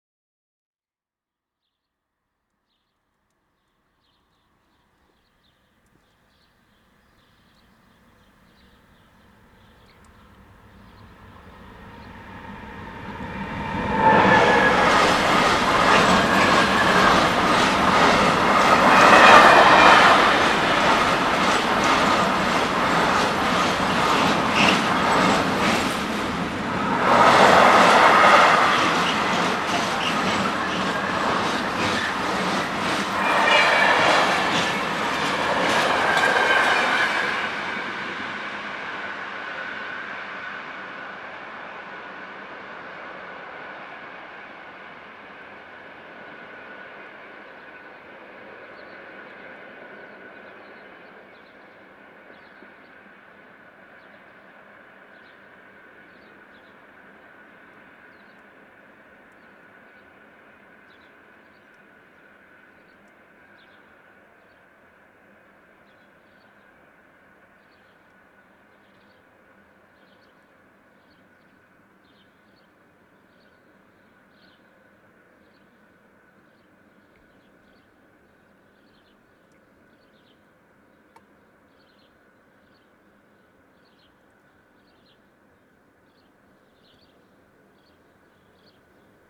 Stumsdorf railroad crossing - passing trains
Railroad, crossing, passing trains, Stumsdorf, shrinking village, post-industrial